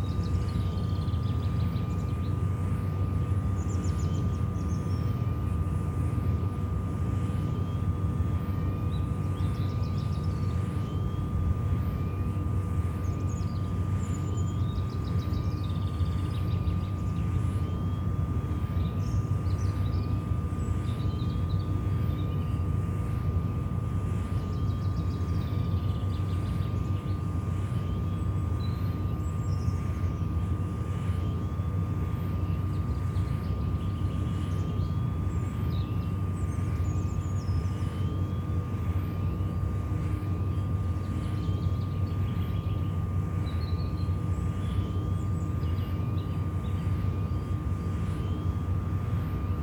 near Allrath, Germany - Windgenerator, microphone touching the tower
External and internal sounds of the windgenerator
4 April, 13:13